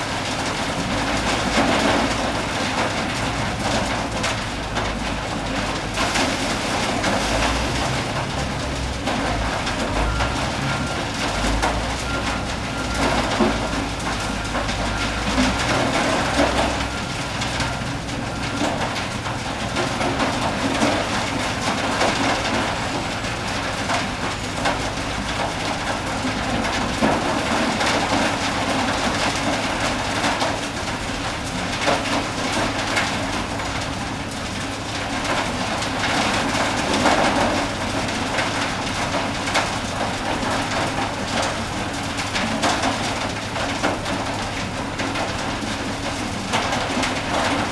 Saint-Laurent-du-Pont, France - Cement crusher
In the Perelle Vicat factory near the road, noise of a cement crusher. All cement is coming by trains from the underground mine.